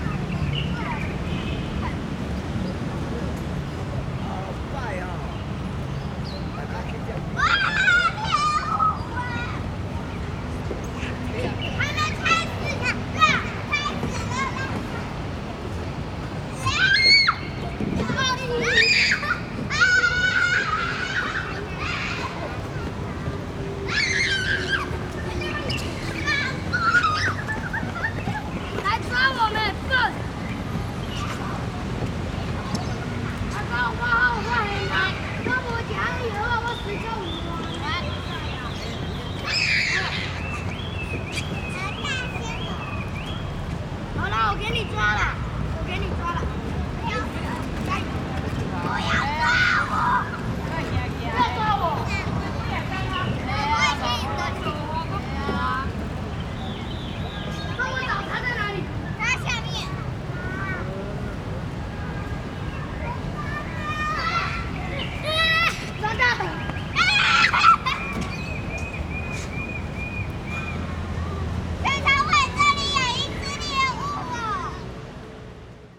Peace Memorial Park, Sanchong Dist., New Taipei City - in the Park
in the Park, Children Playground, Birds singing, Traffic Sound
Rode NT4+Zoom H4n